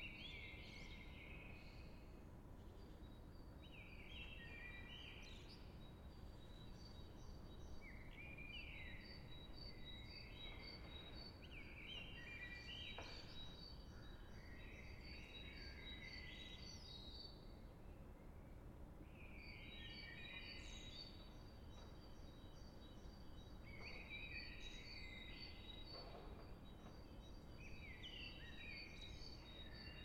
Rue Verte, Schaerbeek, Belgique - Silent City : sunrise
From a window 2nd floor. Zoom H6. Early in the morning when the sun came up. 4th weeks of lockdown.